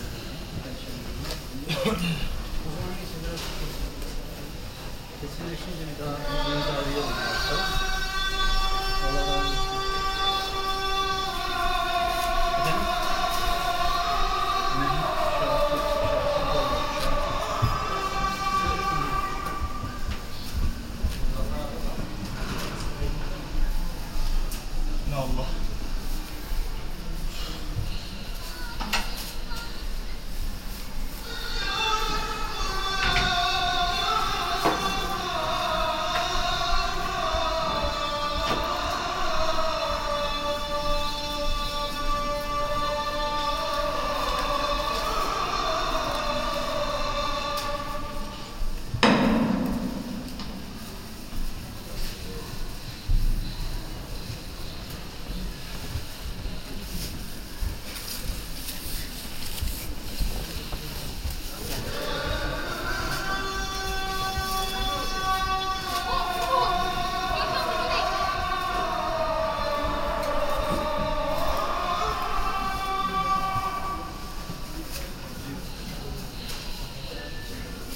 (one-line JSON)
{"title": "Istanbul, Eyüp - Eyüp Sultan Camii - Believers entering Eyüp Sultan Camii", "date": "2009-08-17 17:00:00", "description": "Shoes in plactic bags are placed in shelves while rustling.", "latitude": "41.05", "longitude": "28.93", "altitude": "7", "timezone": "Europe/Berlin"}